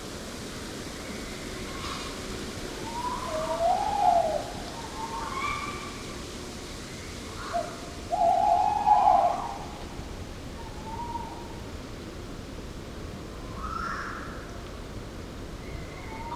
Negast forest, Schupperbaum, Rügen - Owl [Waldkauz] duette#2
Owl (Waldkauz) couple (male/fem) in the woods - for daytime they split - at night they call and find each other
overnight recording with SD Mixpre II and Lewitt 540s in NOS setup